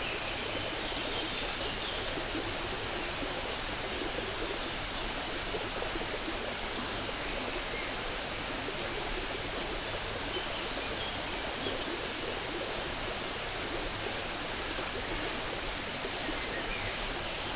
Stream and birds in the forest. Recorded with Sounddevices MixPre3 II and LOM Uši Pro
20 June, 08:35